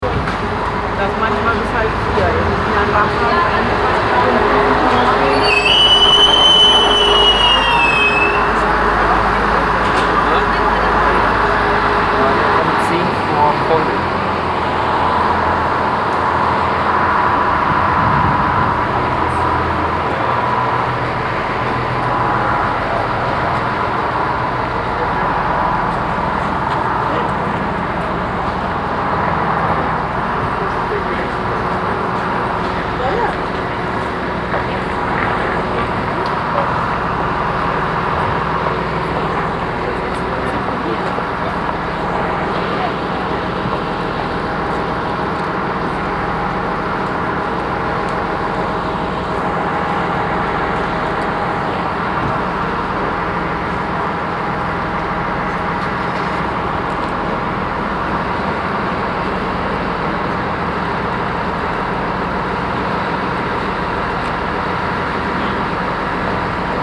Overath, Deutschland - overath, station, trains
At the station. The sounds of a train arriving and depart and another train arriving with people bailing out.
soundmap nrw - social ambiences and topographic field recordings
Overath, Germany, 22 April, 13:02